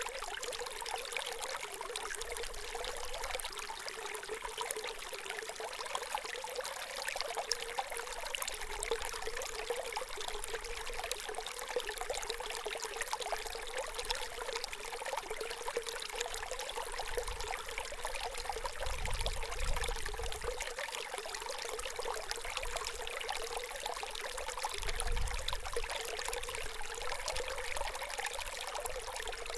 Mayres, France - Stream
We are searching an old abandoned mine. We didn't find it. From a completely collapsed area, a small stream gushes.